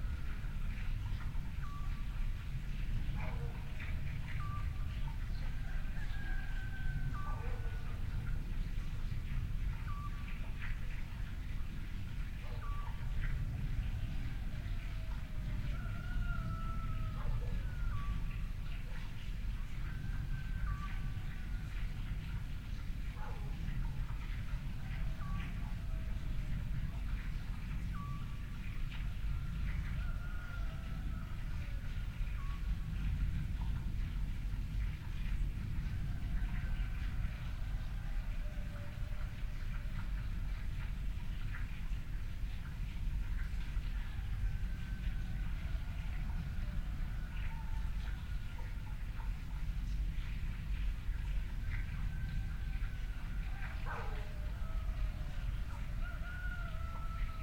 Lachania, Rhodos, Griechenland - Lahania, Rhodos, at night
Soundscape of Lahania village at 04:30 in the morning. Still dark, no sign of the dawn. Calm, no wind. Every now and then distant dogs, then all at once a distant aeroplane, cocks start crowing, a Scops Owl and some dogs join in. After a while all calms down again. Binaural recording. Artificial head microphone set up on the terasse. Microphone facing south east. Recorded with a Sound Devices 702 field recorder and a modified Crown - SASS setup incorporating two Sennheiser mkh 20 microphones.